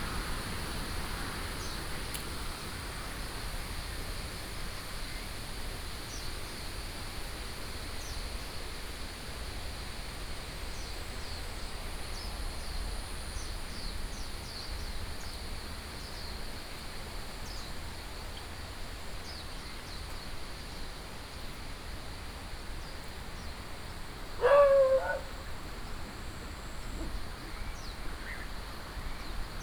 桃米溪, 埔里鎮桃米里, Taiwan - Walking along beside the stream
Walking along beside the stream, Traffic Sound
3 September 2015, 07:37